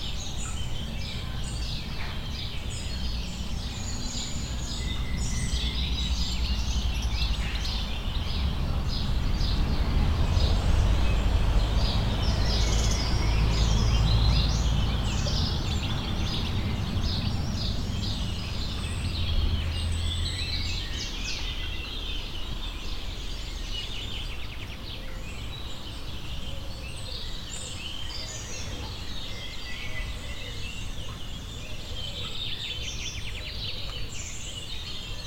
Via S. Francesco, Serra De Conti AN, Italia - giardini museo arti monastiche
Ambience with different birds, water dripping, some traffic from distance.
(xy: Sony PCM-D100)